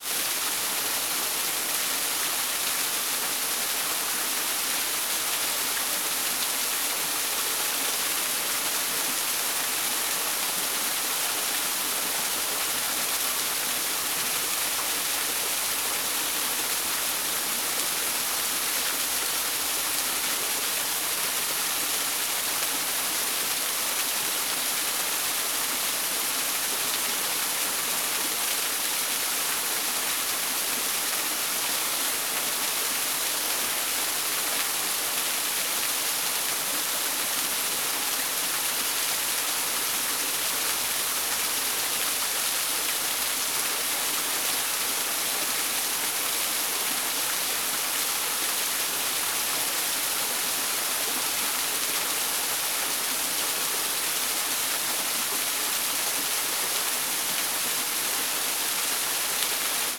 Berlin, Gardens of the World, Chinese Garden - waterfall of static
a small waterfall in Chinese garden. the wall is very irregular and water splashes all around the place of the many stones. it sounds rather artificial for me. as if i was surrounded by dense walls of noisy static.